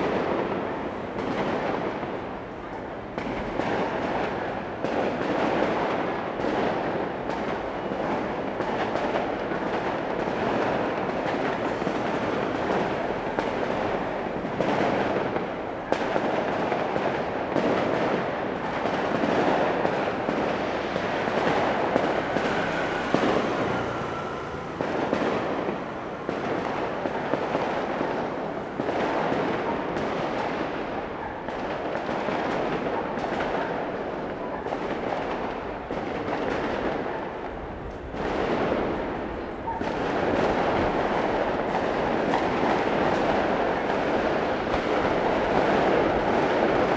Ln., Tonghua St., Da’an Dist. - The sound of firecrackers and fireworks
The sound of firecrackers and fireworks, There are nearby temple festivals
Sony Hi-MD MZ-RH1 + Sony ECM-MS907
Taipei City, Taiwan, 13 February 2012